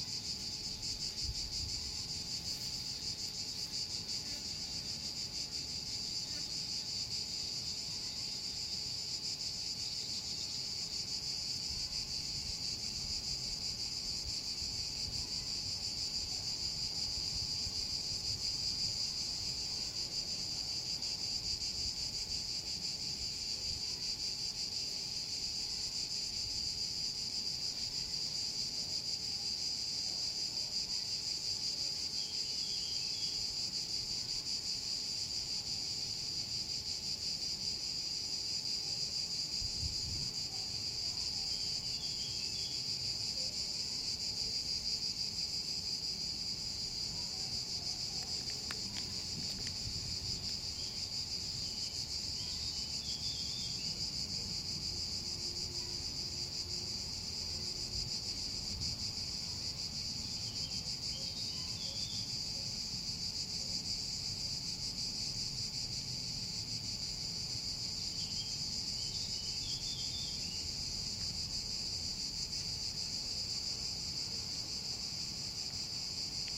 #WLD2016
soundnotes: Sound of human activity more distant - "world slipping away", soundscape more varied, dogs bark in small farm, wind picks up made audible in re-forested area, distant human activity of hammer-like sound in marked Military area - once a fort, now a prison, Motacilla cinerea call, Cistocola juncidis call, reforested old quarries have an effect on the sound